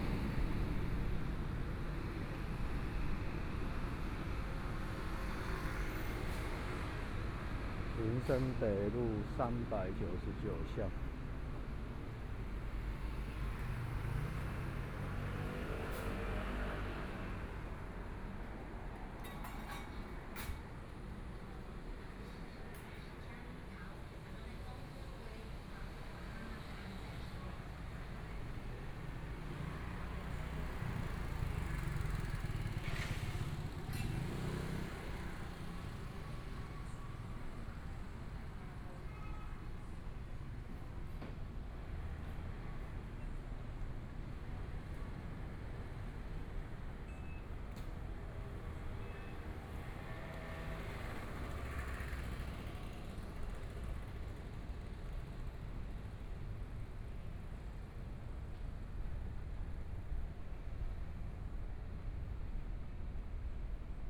Linsen N. Rd., Zhongshan Dist. - Walking through the small streets
Walking through the small streets, Environmental sounds, Traffic Sound, Binaural recordings, Zoom H4n+ Soundman OKM II